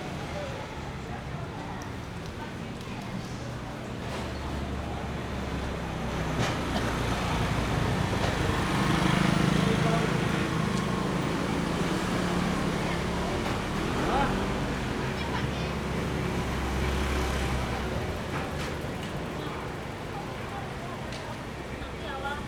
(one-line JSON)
{"title": "Desheng St., Luzhou Dist., New Taipei City - in the traditional market", "date": "2012-03-15 09:33:00", "description": "Walking in the traditional market\nRode NT4+Zoom H4n", "latitude": "25.08", "longitude": "121.47", "altitude": "8", "timezone": "Asia/Taipei"}